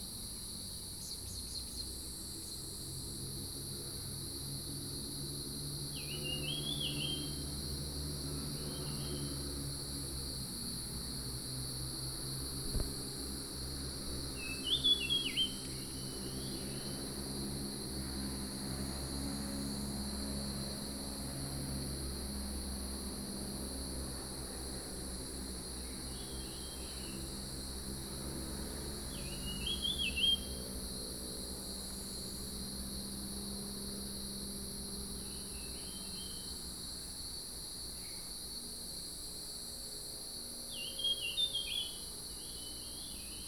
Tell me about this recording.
Mountain trail, Cicadas cry, Bird calls, Traffic Sound